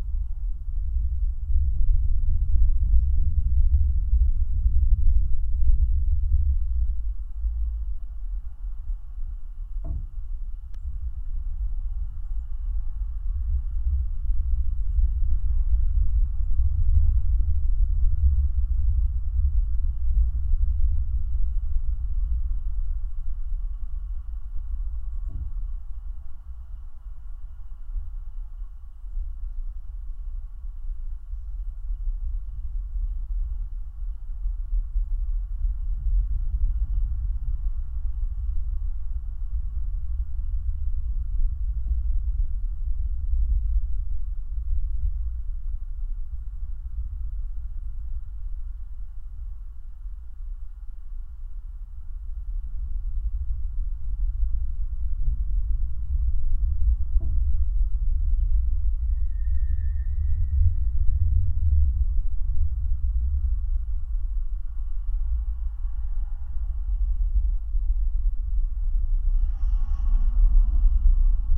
Atkočiškės, Lithuania, abandoned swing
former children house and some abandoned metallic swings from soviet era. I placed contact mics on the chaind holding the chair. absolutelly windless evening. but still - the microphes are immersing us into this silence of the object that is no silent...LOW FREQUENCIES
13 August, 18:30